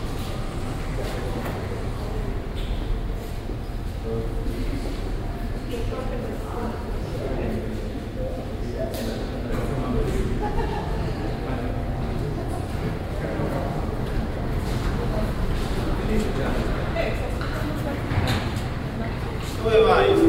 cologne - bonn, airport, ankunft b
soundmap: köln/ nrw
atmo im ankunfsbereichb des koeln - bonner flughafens, abends
project: social ambiences/ listen to the people - in & outdoor nearfield recordings - listen to the people
5 June, ~16:00